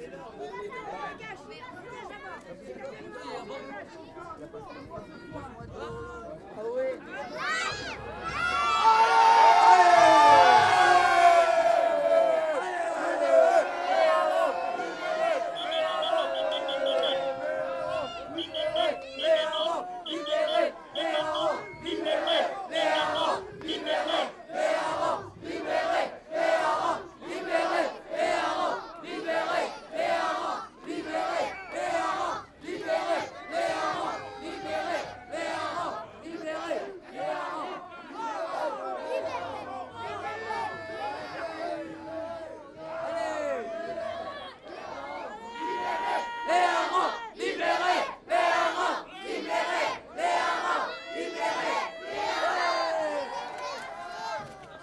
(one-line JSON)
{"title": "Pl. du Village, Dunkerque, France - Carnaval de Dunkerque - Mardyck", "date": "2020-02-15 16:00:00", "description": "Dans le cadre du Carnaval de Dunkerque - Bourg de Mardyck (Département du Nord)\nBande (défilée) de Mardyck\n\"Libérez les harengs !\" - le défilée prend fin...", "latitude": "51.02", "longitude": "2.25", "altitude": "3", "timezone": "Europe/Paris"}